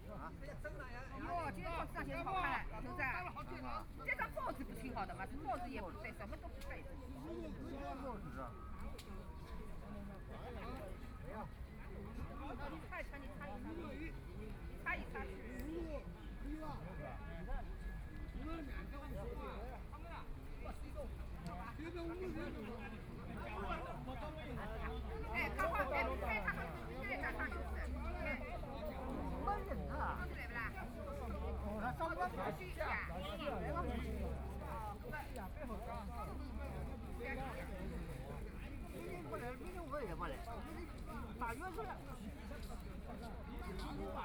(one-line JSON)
{"title": "Penglai Park, Shanghai - chatting", "date": "2013-11-29 12:39:00", "description": "Many elderly people gathered in the sun chatting and playing cards ready, Trumpet, Binaural recording, Zoom H6+ Soundman OKM II", "latitude": "31.21", "longitude": "121.49", "altitude": "8", "timezone": "Asia/Shanghai"}